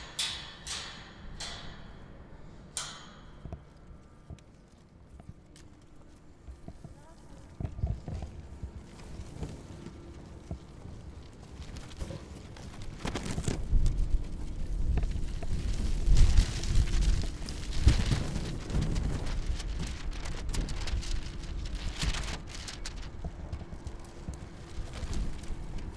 Saint-Nazaire, France - Son barreaux des grilles du Petit Maroc
Son des barreaux des grilles du petit Maroc frappé par une tige métallique tenue par une personne qui court, à proximité du sous-marin, suivi du son des rubans de chantier claquant au vent.